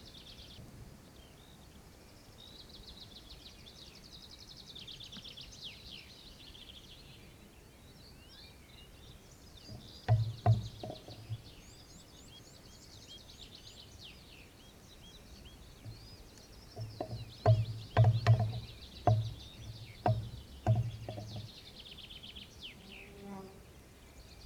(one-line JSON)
{"title": "Utena, Lithuania, plastic bottle in wind", "date": "2012-06-21 16:40:00", "description": "plastic bottle hooked on a stick to scare off wild hogs", "latitude": "55.55", "longitude": "25.57", "altitude": "103", "timezone": "Europe/Vilnius"}